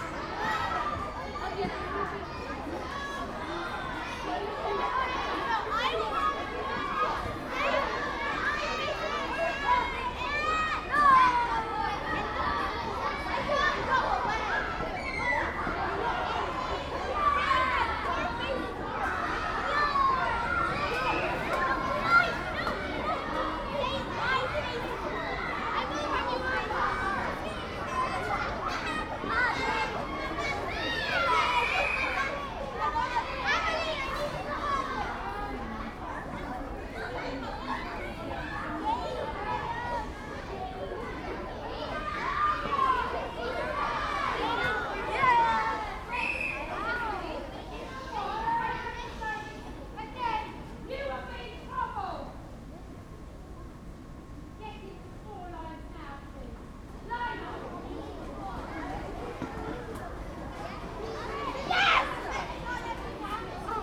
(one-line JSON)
{"title": "St. Mary Abbots Gardens, Drayson Mews, Kensington, London, UK - St. Mary Abbots Gardens school playground", "date": "2019-05-07 13:27:00", "description": "Lunch in the park next to a school playground", "latitude": "51.50", "longitude": "-0.19", "altitude": "20", "timezone": "Europe/London"}